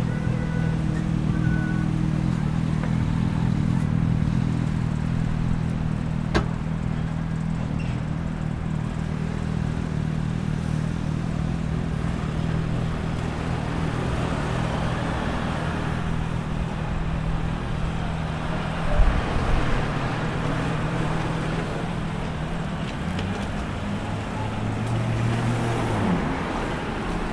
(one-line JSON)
{"title": "Norman Road, Deptford, London - Approaching Deptford Creek", "date": "2021-10-29 13:30:00", "description": "Sounds collected as part of an MA research project exploring phenomenological approaches to thinking about the aesthetics and stewardship of public space. A sound gathered at the turning point leading walkers towards Deptford Creek - a narrow, sheltered waterway; an inlet and offshoot of London's snaking River Thames -, one of the most biodiverse landscapes for its size in London, and one of the rare 2% of Tidal Thames’ river edges to remain natural and undeveloped. The Norman Road entrance to this urban eco-site is elusive, veiled by various luxury flat complexes the walker becomes an intruder, as the the line between public and pseudo-public space becomes increasingly blurred. To reach the turning, the walker must first venture through the cacophony of commuter traffic combined with a tireless flow of construction vehicles, symptomatic of the untiring development projects absorbing public spaces in the Creekside area.", "latitude": "51.48", "longitude": "-0.02", "altitude": "5", "timezone": "Europe/London"}